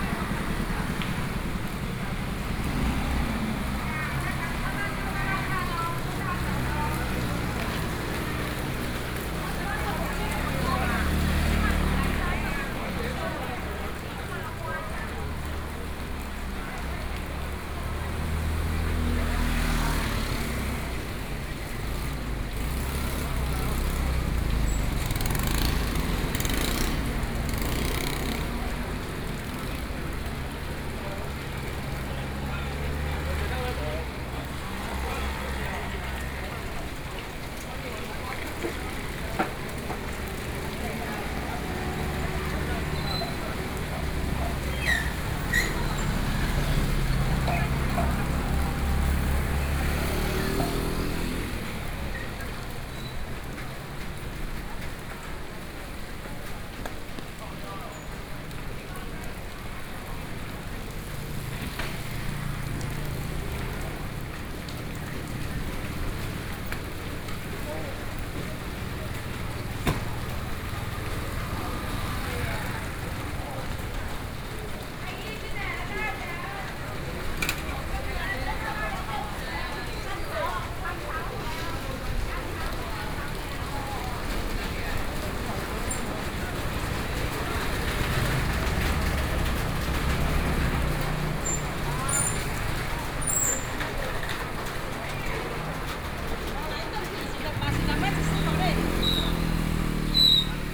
Zhongzheng St., Luodong Township - walking in the Market
Rainy Day, Walking in the traditional market, Zoom H4n+ Soundman OKM II
Yilan County, Taiwan, 7 November 2013